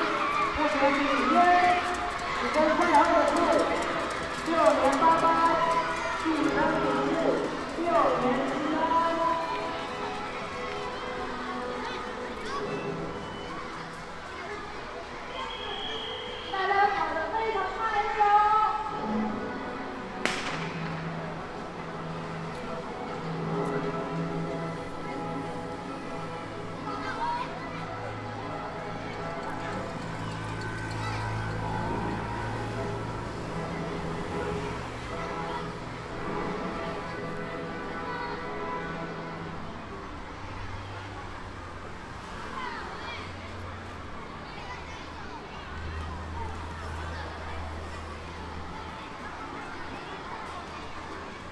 {"title": "Taiwan, Taichung City, Dali District, 長榮里 - Sports Day", "date": "2007-12-30 12:01:00", "description": "Recorded with a Zoom H2 Handy Recorder from the street on sports day at Yiming Elementary School, December 2007.", "latitude": "24.11", "longitude": "120.69", "altitude": "58", "timezone": "Asia/Taipei"}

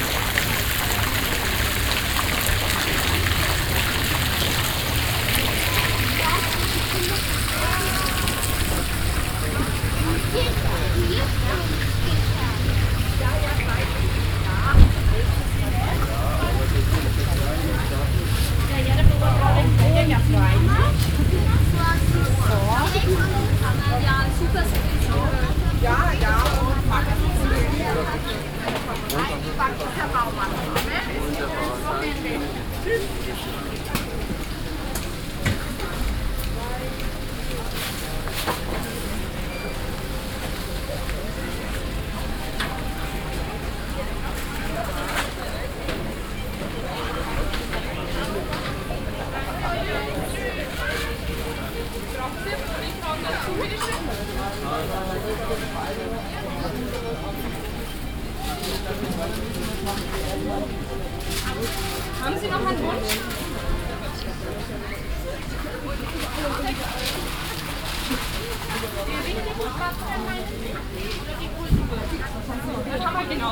{"title": "Marktplatz, Erlangen, Deutschland - wochenmarkt", "date": "2013-08-12 12:13:00", "description": "walking on the market, fountain, street musicians\nolympus ls-5; soundman okm II", "latitude": "49.60", "longitude": "11.00", "altitude": "281", "timezone": "Europe/Berlin"}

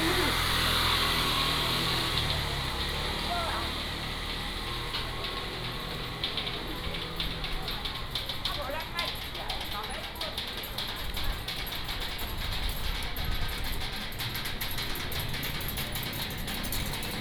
{"title": "Minsheng Rd., Lukang Township - Walk in the store street", "date": "2017-02-15 11:12:00", "description": "Walk in the store street", "latitude": "24.06", "longitude": "120.43", "altitude": "12", "timezone": "GMT+1"}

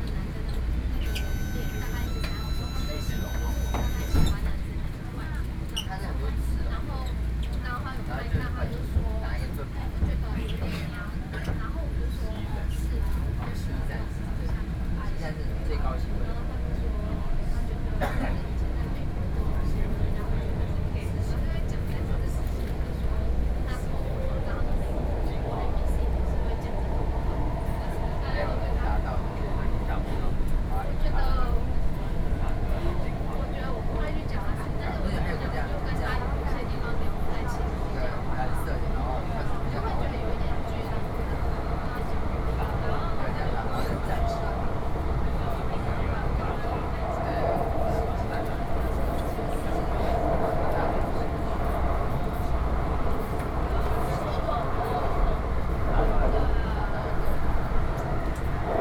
{"title": "Beitou - inside the Trains", "date": "2013-06-14 18:27:00", "description": "MRT Train, Sony PCM D50 + Soundman OKM II", "latitude": "25.12", "longitude": "121.51", "altitude": "14", "timezone": "Asia/Taipei"}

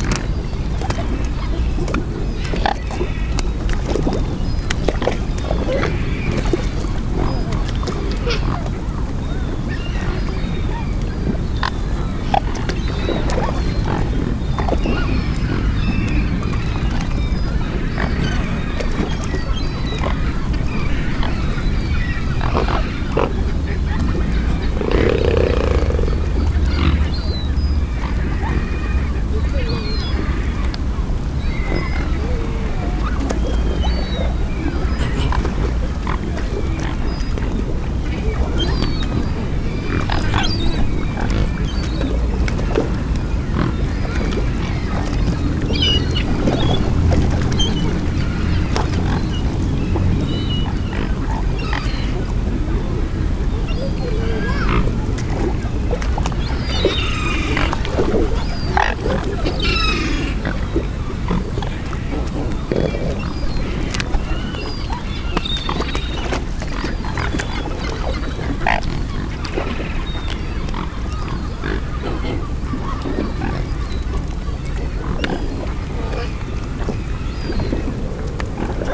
{"title": "Swans and Bells - Swan Sanctuary, Riverside, Worcester UK", "date": "2019-11-02 14:21:00", "description": "A huge gathering of swans on the River Severn near the bridge in Worcester. Strangely the cathedral bourdon bell tolls more than 20 times. The swans are very close inspecting me and my equipment. A motor boat passes. Recorded with a Sound Devices Mix Pre 3 and 2 Sennheiser MKH 8020s.", "latitude": "52.19", "longitude": "-2.23", "altitude": "15", "timezone": "Europe/London"}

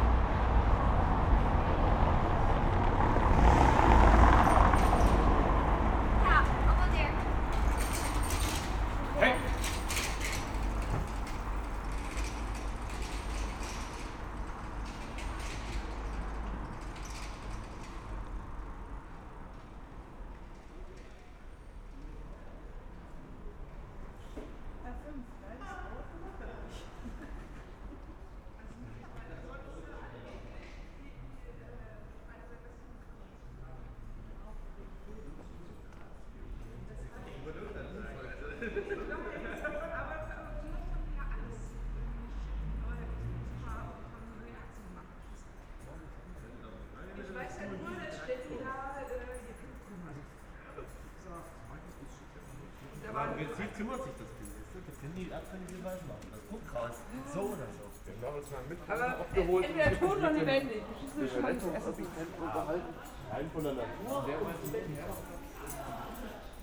{"title": "berlin: friedelstraße - the city, the country & me: night-time ambience", "date": "2013-05-11 03:03:00", "description": "cyclists, passers by, taxis\nthe city, the country & me: may 11, 2013", "latitude": "52.49", "longitude": "13.43", "altitude": "46", "timezone": "Europe/Berlin"}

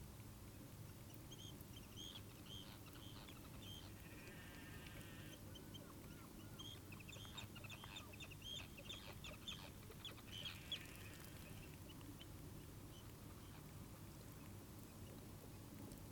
{"title": "Burland Croft Trail, Trondra, Shetland Islands, UK - Seaweed, Shetland sheep, Arctic Terns", "date": "2013-08-05 16:53:00", "description": "One of the things I have learnt in Shetland is that many crofters and farmers still supplement the diet that Shetland sheep enjoy on land with seaweed from the shoreline. At different points in the year either the seaweed is gathered in for the sheep, or they make their way down to the shore to eat the seaweed and though doing to glean some much-needed minerals for their diet. I have heard several accounts in the Tobar an Dualchais archives which refer to this practice, and Mary Isbister mentioned it to me too, while generously showing me all around the Burland Croft Trail. I was wondering if I might find some sounds which could describe in some way the relationship between seaweed and sheep. While exploring Tommy and Mary Isbister's land, I found that down by the shoreline, the seaweed was making exciting sounds. At each slight swell of the tide, millions of tiny, crackling-type bubbly sounds would rise up in a drift from the swirling wet leaves.", "latitude": "60.12", "longitude": "-1.30", "timezone": "Europe/London"}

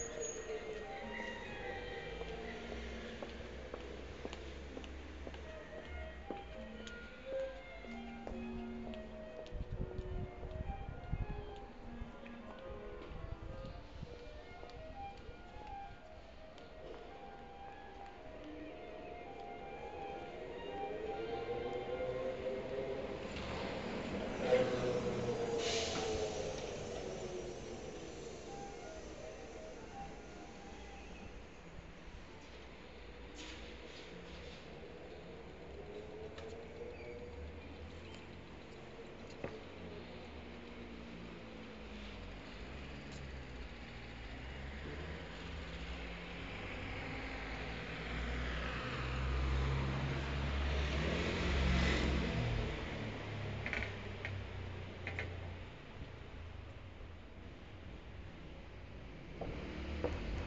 {"title": "XIII. kerület, Budapest, Magyarország - Budapest 13th district music school", "date": "2012-04-17 14:50:00", "description": "Approaching the crossing of Hollan Erno str. and Radnoti Miklos str. where the 13th District Music School is located. A woman says \"menjel, menjel\" (\"go, go\"). Music from the music school. Traffic. A cablecar passing.", "latitude": "47.52", "longitude": "19.05", "altitude": "113", "timezone": "Europe/Budapest"}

